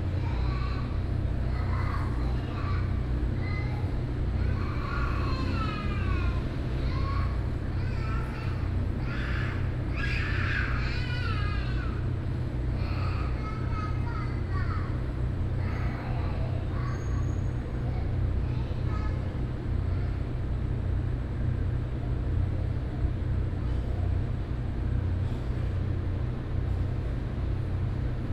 臺灣大學綜合體育館, Taipei City, Taiwan - In the stadium entrance
In the stadium entrance, Sitting on ladder, Noise Generator, TV signal broadcast truck